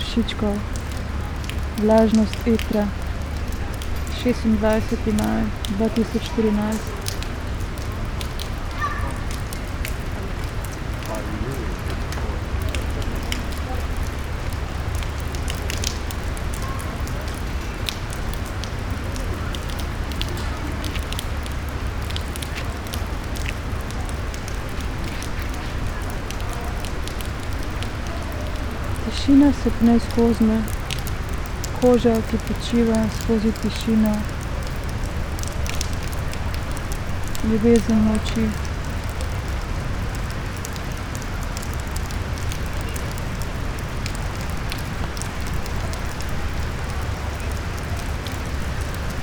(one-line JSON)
{"title": "Secret listening to Eurydice, Celje, Slovenia - reading poems with raindrops", "date": "2014-06-13 17:58:00", "description": "reading fragmented poems of my own realities (Petra Kapš)\nlast few minutes of one hour reading performance Secret listening to Eurydice 13 / Public reading 13 / at the Admission free festival.", "latitude": "46.23", "longitude": "15.26", "altitude": "243", "timezone": "Europe/Ljubljana"}